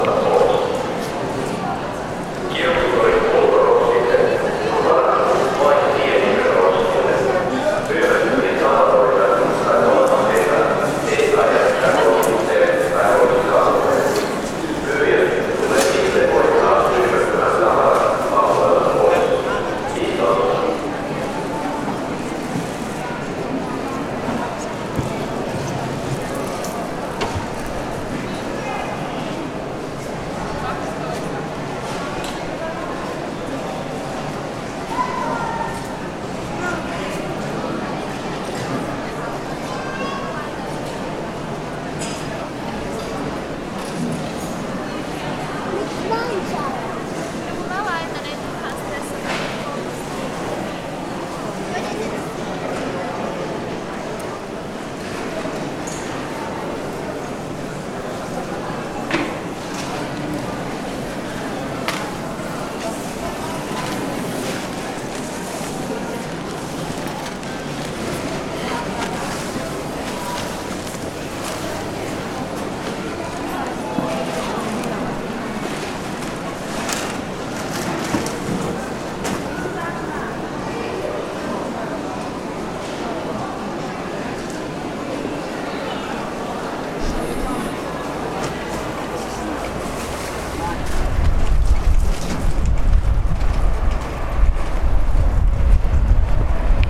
Aleksis Kiven katu, Helsinki, Suomi - Flea market ambient
Flea market Valtteri. Flea market ambient soundscape at Vallila storehouses.
Helsinki, Finland